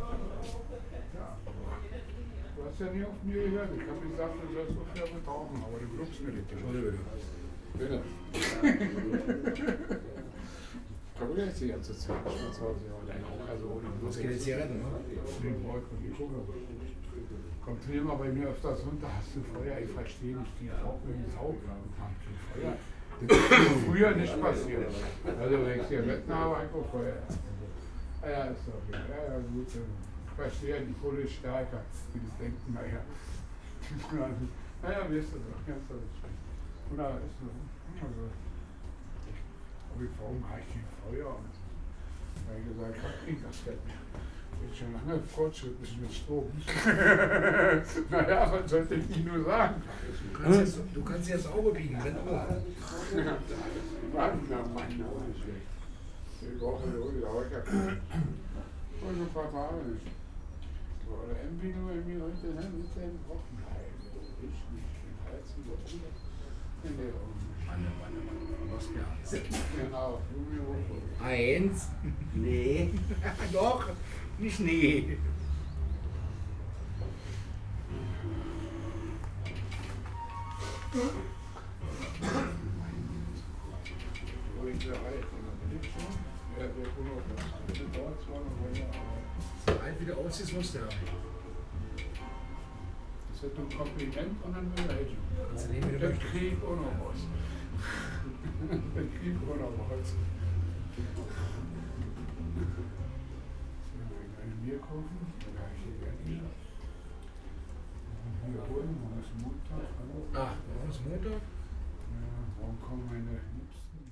Berlin, Plänterwald, S-Bahncafe - conversation
conversation of two men about this and that
Berlin, Deutschland